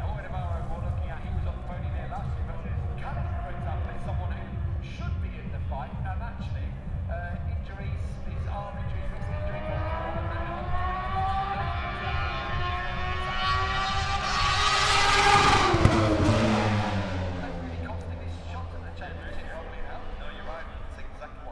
Silverstone Circuit, Towcester, UK - british motorcycle grand prix 2022 ... moto two ...
british motorcycle grand prix 2022 ... moto two free practice two ... dpa 4060s on t bar on tripod to zoom f6 ...
East Midlands, England, UK, August 2022